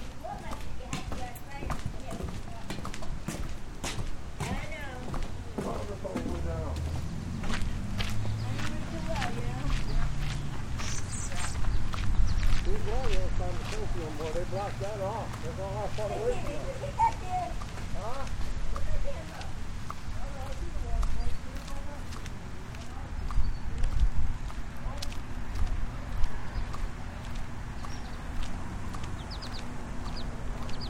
East Rock Park, New Haven, CT
WLD, World Listening Day, Recorded while walking through East Rock Park in New Haven, CT. Starts at my apartment and goes through the park and back.